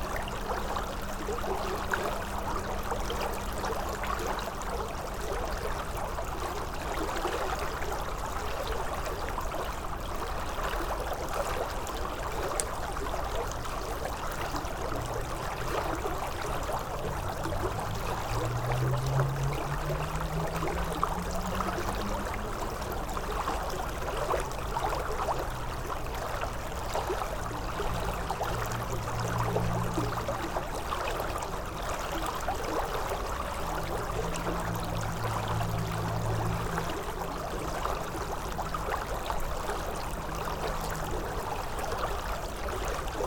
Joneliškės, Lithuania - winter crystal river
winter crystal river
Utenos apskritis, Lietuva, 12 January